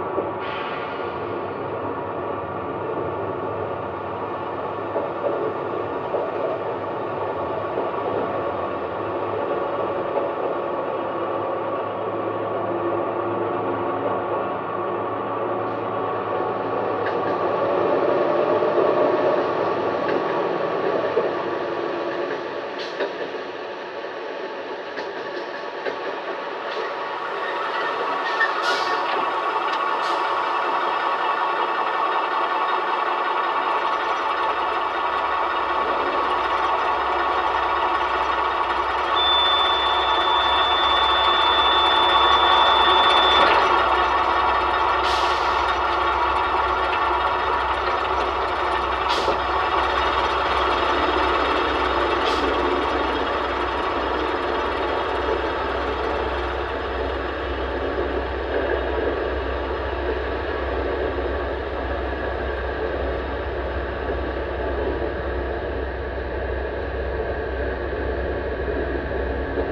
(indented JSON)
{
  "title": "Tufo, The Train Between Altavilla and Benevento, Italy - sounds from the traintrip",
  "date": "2012-07-03 16:06:00",
  "description": "The beautiful train line between Benevento and Avellino in the rural area of Irpina is threatened to be shut down in October 2012. Also the line between Avellino and Rocchetta is facing its end. The closing of the rail lines is a part of a larger shut down of local public transport in the whole region of Campania. These field recordings are from travels on the train between Benevento-Avellino and are composed as an homage to the Benevento-Avellino -and Avellino-Rocchetta line.\nRecorded with contact mic, shotgun and lavalier mics.",
  "latitude": "41.01",
  "longitude": "14.82",
  "altitude": "229",
  "timezone": "Europe/Rome"
}